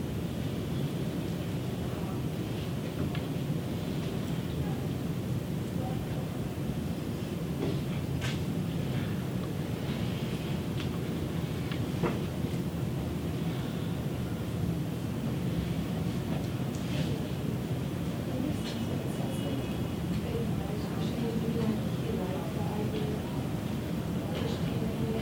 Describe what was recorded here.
The sounds of a quiz being taken.